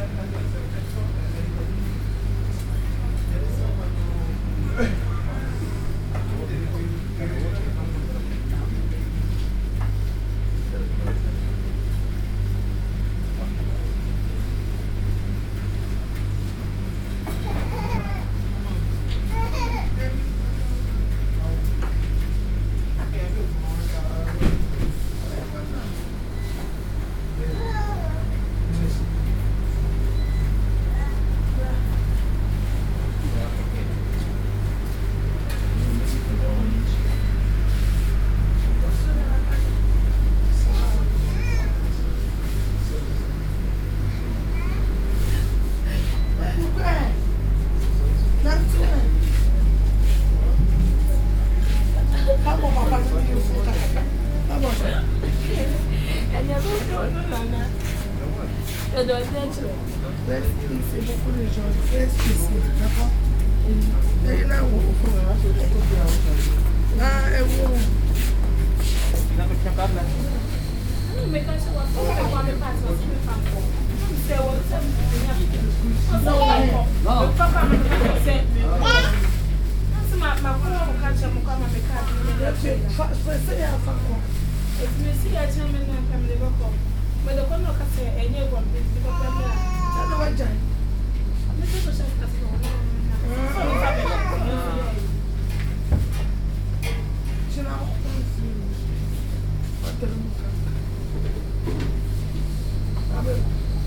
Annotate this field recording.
Brussels, Place Jules Dillens, Primus Automatic Laundry Wash, World Listening Day 2011.